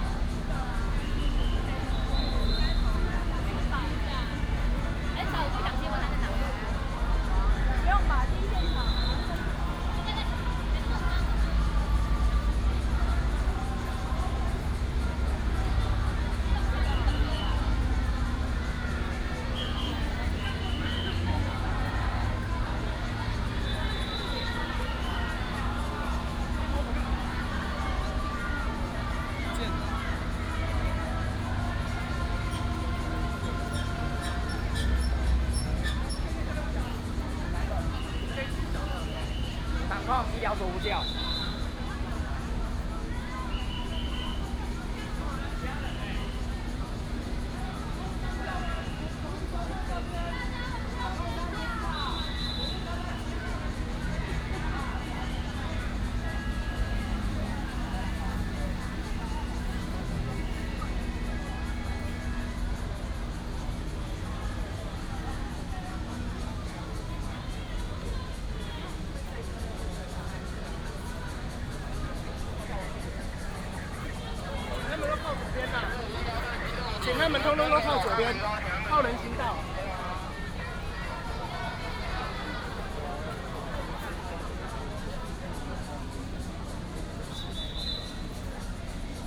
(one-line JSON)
{"title": "Ministry of Education, Taiwan - Protest", "date": "2015-07-05 18:19:00", "description": "Protest march, High school students in front of the Ministry of Education to protest the government illegal", "latitude": "25.04", "longitude": "121.52", "altitude": "13", "timezone": "Asia/Taipei"}